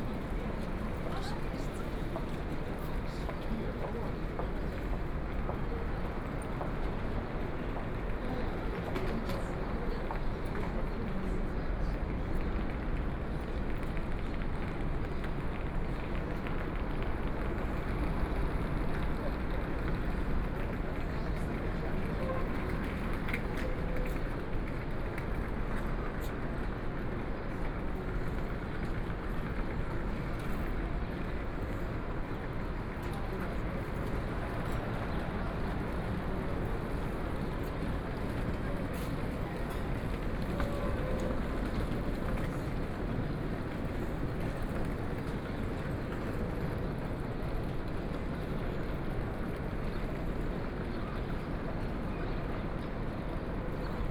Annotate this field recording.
From Square, Then go into the Airport Terminal, Walking in Airport Terminal